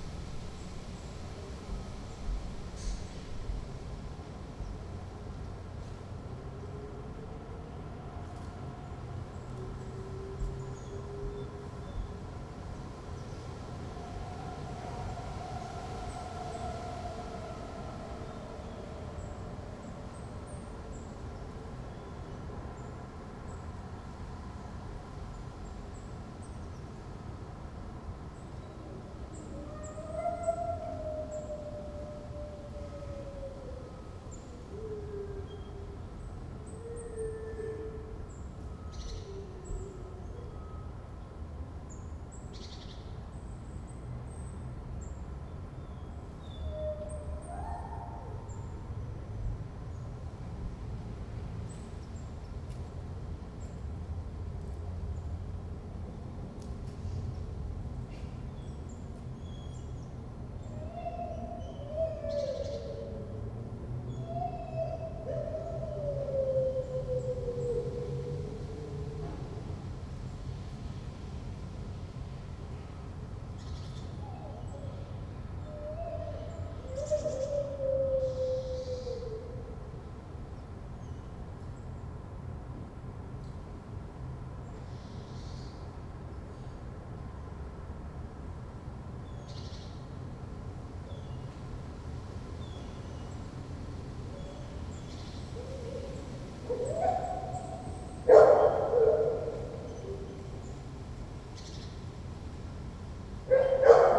Recorded from the bathroom of a rented apartment. The dog howled and barked all day.
Zoom H2 internal mics.